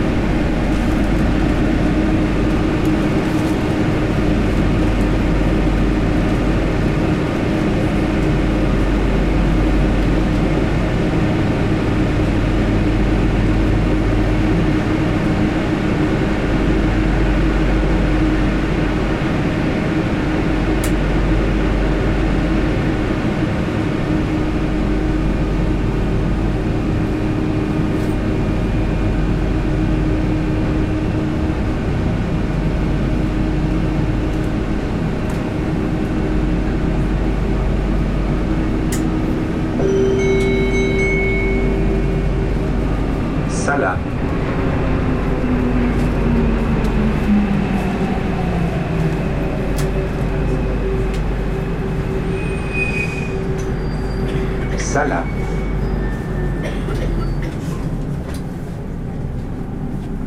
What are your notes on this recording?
arrivée dans le métro M2 à la Sallaz, annonce, sortie de la rame, fermeture des portes, micros Schoeps